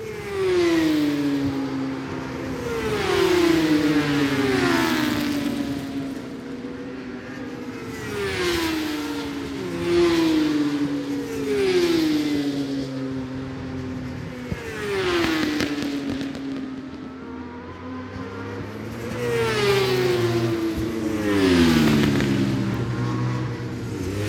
Brands Hatch GP Circuit, West Kingsdown, Longfield, UK - british superbikes 2005 ... superbikes ...
british superbikes 2005 ... superbikes qualifying two ... one point stereo mic to minidisk ...
8 October 2005